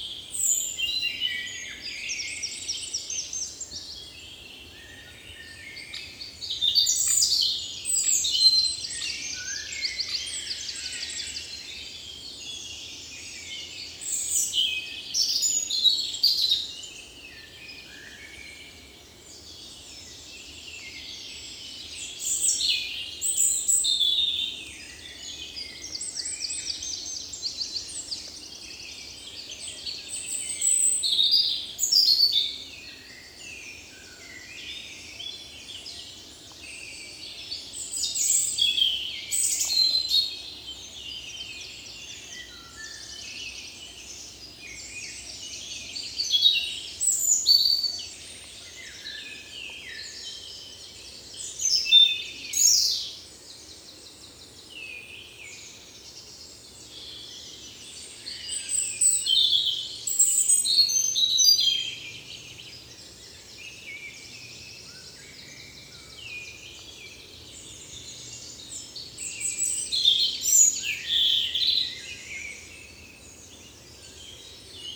Montigny-le-Tilleul, Belgique - Birds in the forest
Robin. At the backyard : a Blackbird and Common Chaffinch.